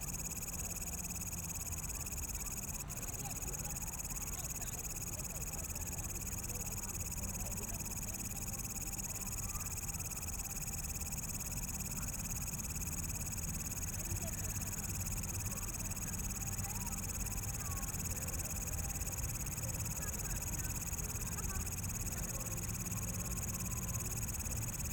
Taipei EXPO Park, Taiwan - Night in the park
Night in the park, Insects, Traffic Sound, People walking in the park
Please turn up the volume a little
Zoom H6, M/S
17 February 2014, 20:13